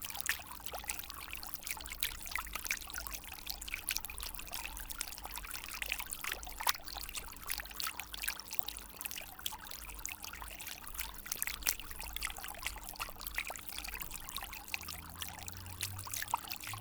They tell : Armenia is the country of free water. In fact, there's fountains absolutely everywhere, and everybody go there. They drink a few water and continue walking. It was important to record at less one of these fountains.